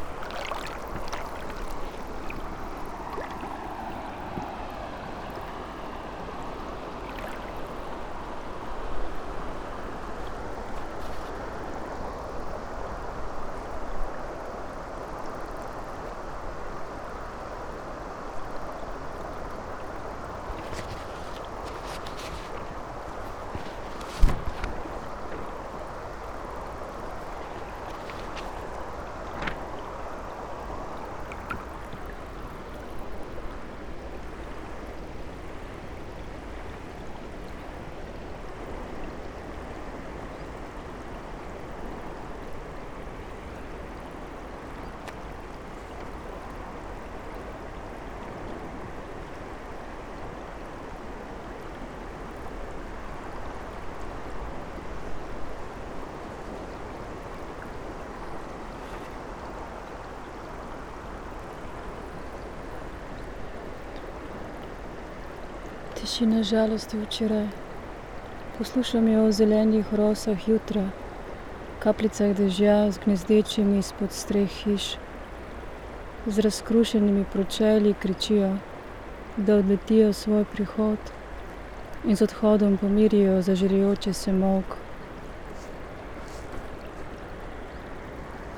{"title": "river Drava, Dvorjane - tiny stalk of poplar tree on paper", "date": "2014-09-21 14:15:00", "description": "tiny stem, moved by water flow and wind, touching unfolded book, spoken words", "latitude": "46.47", "longitude": "15.78", "altitude": "229", "timezone": "Europe/Ljubljana"}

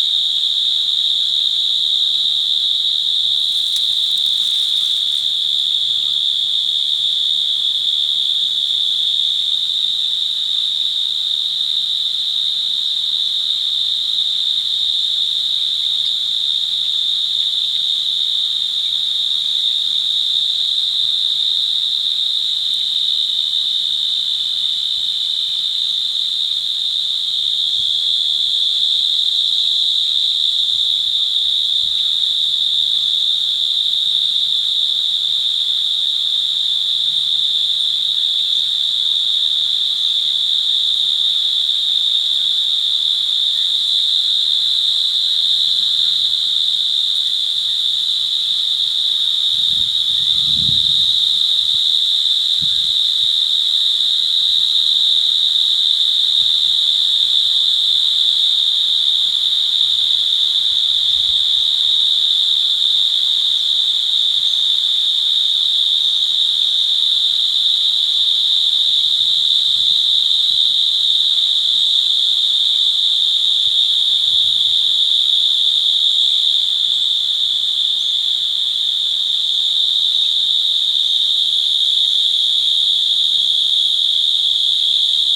{"title": "Glen Cairn - Kanata South Business Park, Ottawa, ON, Canada - Field Crickets", "date": "2016-10-05 14:00:00", "description": "Warm sunny day in field off bike path. Some wind and traffic EQ'd out with high-pass filter. Used Tascam DR-08 handheld recorder.", "latitude": "45.28", "longitude": "-75.88", "altitude": "105", "timezone": "GMT+1"}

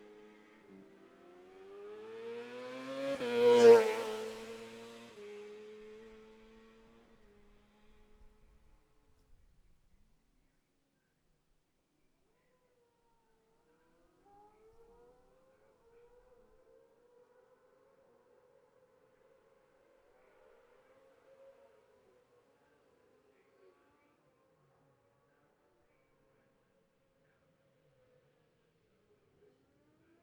{"title": "Jacksons Ln, Scarborough, UK - Gold Cup 2020 ...", "date": "2020-09-11 12:40:00", "description": "Gold Cup 2020 ... Sidecars practice ... dpa bag MixPre3 ...", "latitude": "54.27", "longitude": "-0.41", "altitude": "144", "timezone": "Europe/London"}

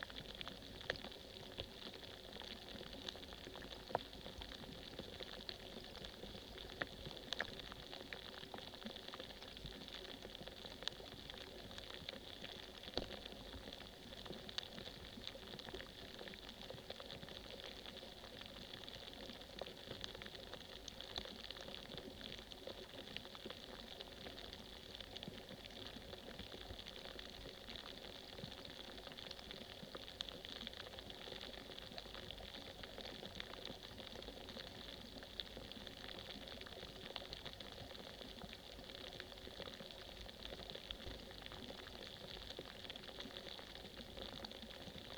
{"title": "Lithuania, Utena, on the ice", "date": "2012-01-17 15:15:00", "description": "contact microphone placed upon a edge of first ice in the river", "latitude": "55.50", "longitude": "25.54", "altitude": "142", "timezone": "Europe/Vilnius"}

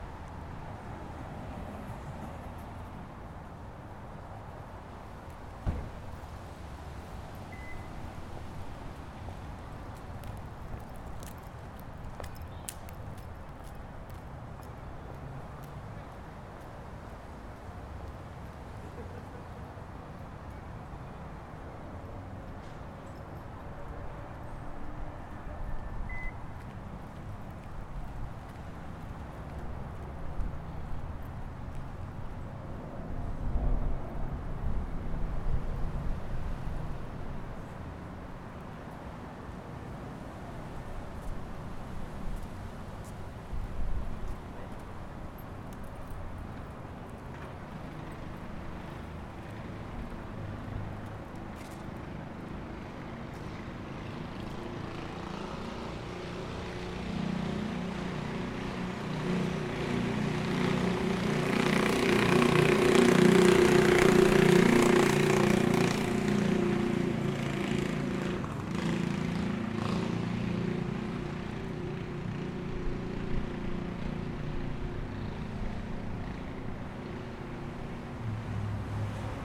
{"title": "YMCA, N Tejon St, Colorado Springs, CO, USA - YMCA", "date": "2018-05-14 18:33:00", "description": "Outside the YMCA, cars and people walking by can be heard. Recorded with ZOOM H4N Pro with a dead cat.", "latitude": "38.84", "longitude": "-104.82", "altitude": "1837", "timezone": "America/Denver"}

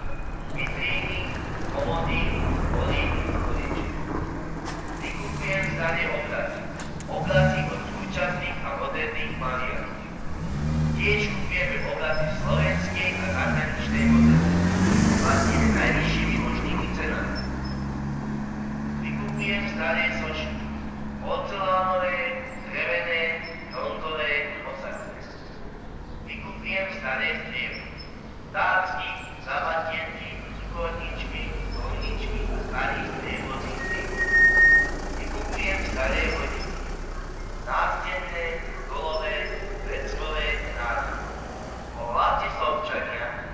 {"title": "Bratislava-Ružinov, Slovakia - Mobile antique buyer", "date": "2015-04-23 11:25:00", "description": "One of the mobile antique buyers, usually Roma people from southern Slovakia, cruising the streets of Bratislava. Binaural recording.", "latitude": "48.15", "longitude": "17.13", "altitude": "139", "timezone": "Europe/Bratislava"}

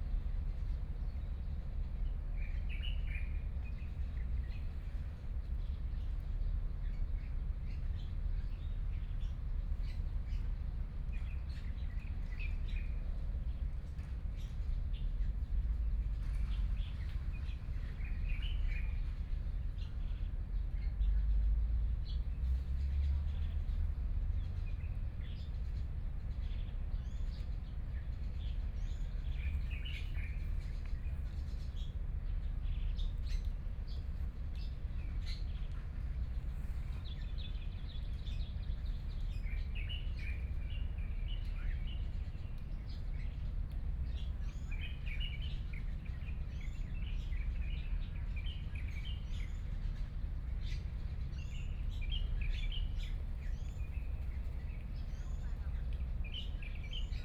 {"title": "鹽埕區沙地里, Kaohsiung City - in the Park", "date": "2014-05-14 06:18:00", "description": "Birds singing, Morning park", "latitude": "22.62", "longitude": "120.29", "altitude": "3", "timezone": "Asia/Taipei"}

